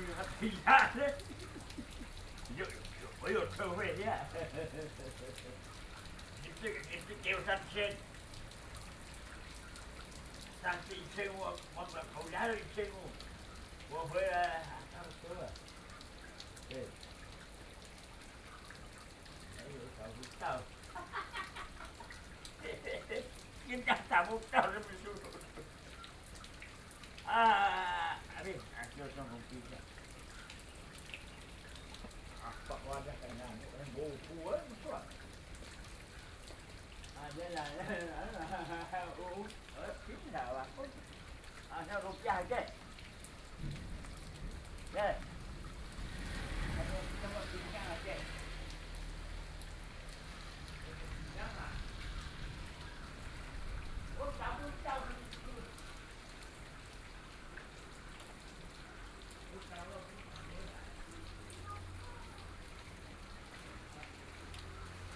泰雅商號, 大同鄉崙埤村 - Rainy Day
In a small shop doorway, Rainy Day, Thunderstorm, Small village, Traffic Sound, At the roadside
Sony PCM D50+ Soundman OKM II